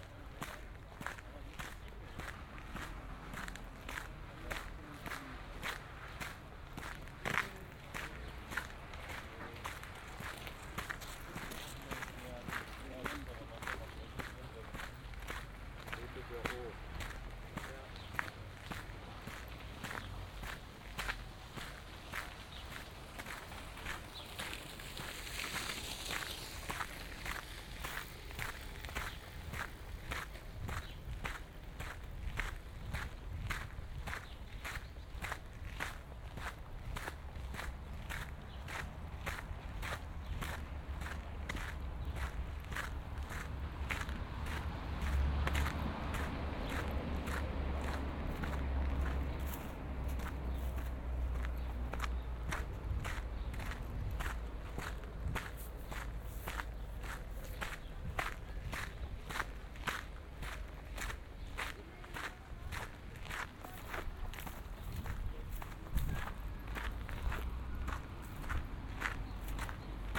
soundwalk Elsenstr. - Lohmühle - old railway track
walking along an (possibly) old railway stretch from Elsenstr. to Lohmühlenufer on a warm summer sunday evening, 10m above the normal city level. (binaural recording, use headphones)
Berlin, Germany, 27 June 2010